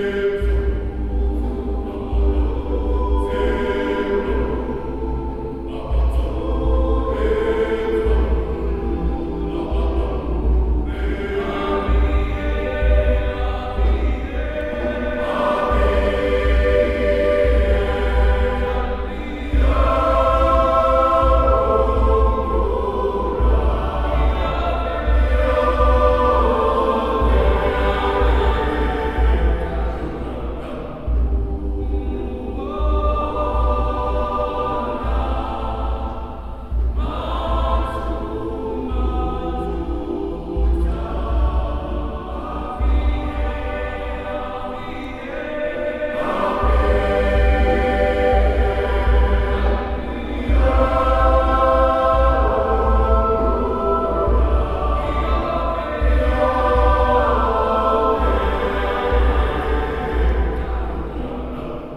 Paulus Kirche, Hamm, Germany - Lord we praise Thee...
an excerpt of the first song, a Herero Spiritual, “Lord we praise Thee”…
(thinking of what is known in history as the genocide of the Herero people by the German Army under General von Trotta… the beautiful song of praise gives as a bit of a shudder…)
listening to a Herero in Windhoek...